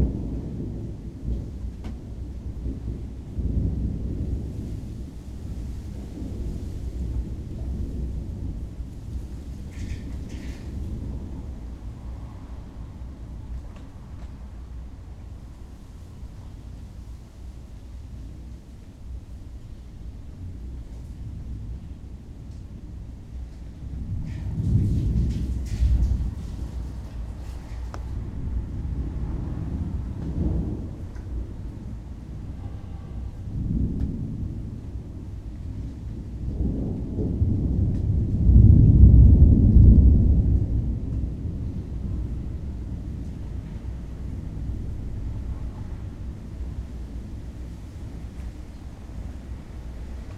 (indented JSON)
{
  "title": "takasaki, kaminakai, thunderstorm",
  "date": "2010-07-26 09:22:00",
  "description": "a thunderstorm coming up on a hot summers day afternoon. mild wind cooling down the heat a bit.\ninternational city scapes - social ambiences and topographic field recordings",
  "latitude": "36.31",
  "longitude": "139.03",
  "altitude": "90",
  "timezone": "Asia/Tokyo"
}